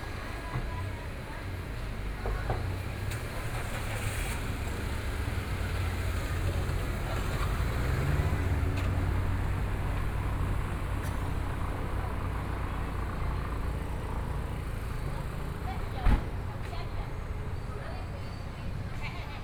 左營區果峰里, Kaohsiung City - In the bazaars and markets
In the bazaars and markets within the community, Traffic Sound, The weather is very hot